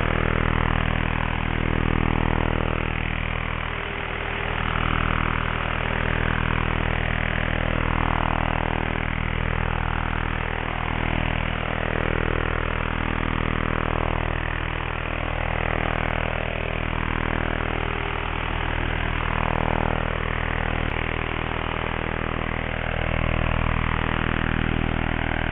Puerto Percy, Magallanes y la Antártica Chilena, Chile - storm log - radiostorm highwire I
radiostorm, statics 22.0003MHz, Nooelec SDR + upconverter at highwire (looped 5 times)
This is part of a series of recordings, shifting to another frequency spectrum. Found structures, mainly old cattle fences and unused telephone lines are used as long wire antennas wit a HF balun and a NESDR SMArt SDR + Ham It Up Nano HF/MF/NF upconverter.
17 February 2021, 22:30